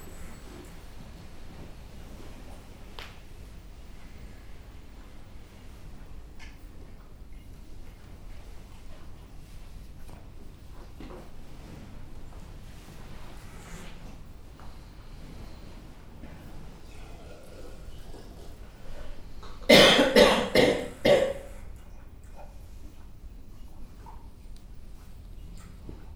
Part two of the formal tea meditation. After a short period of sitting meditation, Brother Phap Xa leads an incense offering, touching the earth (prostrations) and is joined in the refrains by participants. The participants bow to each other as a mark of gratitude repeating inwardly the line: A lotus to you, Buddha to be. Brother Phap Lich then prepares the tea and participants pass the cups around the group, bowing before receiving the tea. (Sennheiser 8020s either side of a Jecklin Disk on SD MixPre6)